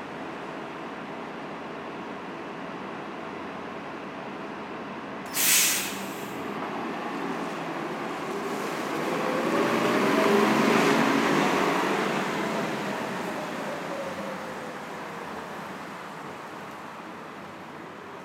Myrtle Av/Fresh Pond Rd, Queens, NY, USA - Traffic in Myrtle Av/Fresh Pond Rd
Sounds of traffic at the intersection between Fresh Pond Road and Myrtle Avenue.